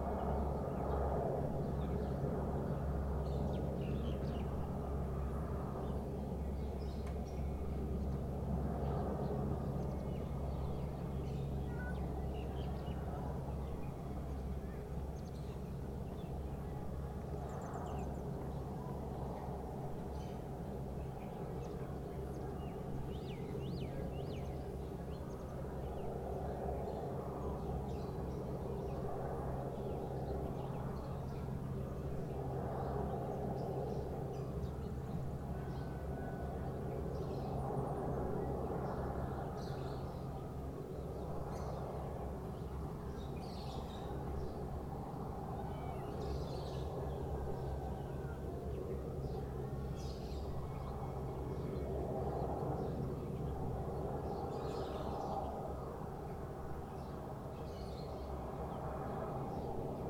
Rue Leconte De Lisle, Réunion - 20180205 0953-1003
20180205_0953-1003 CILAOS concert d'hélicoptère, 6mn30 après le début voici le son de l'hélicoptère "le plus silencieux du monde"!!!
Ces hélicoptères ont du être modifié: ils font bien plus de bruit que devraient faire des EC130B4 normaux, ou bien les pilotes conduisent comme des manches: c'est une énorme nuisance ici bas qui met en danger la flore et la faune.